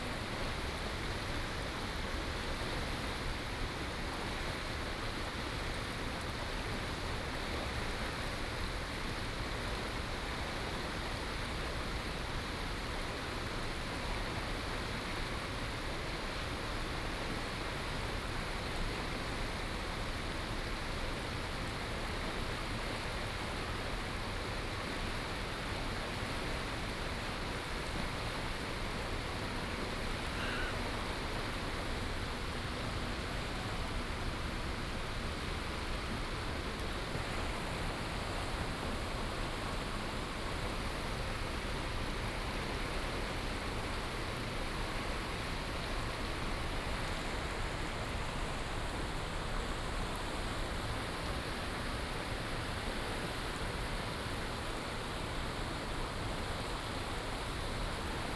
桃米紙教堂, 埔里鎮, Taiwan - Rainy Day
Rainy Day, The river
Puli Township, 桃米巷54號, 25 March